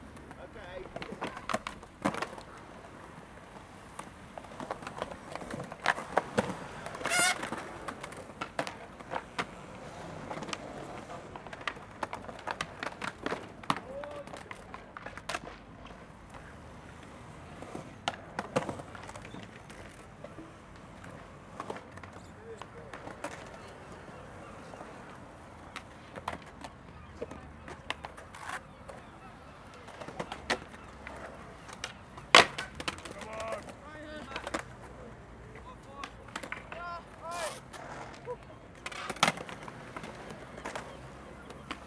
Rose Walk, The Level, Brighton, Vereinigtes Königreich - Brighton - The Level - Skater Park
In Brighton at the Level - a public skater park - the sounds of skating
soundmap international:
social ambiences, topographic field recordings